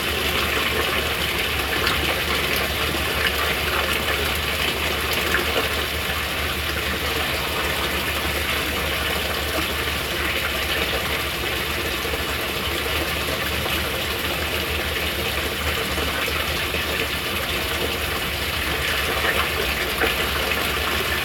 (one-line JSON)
{"title": "Harber St, Alexandria NSW, Australia - Water Feature in Sydney Park", "date": "2017-09-21 13:46:00", "description": "Sound of the water feature and drain", "latitude": "-33.91", "longitude": "151.18", "altitude": "6", "timezone": "Australia/Sydney"}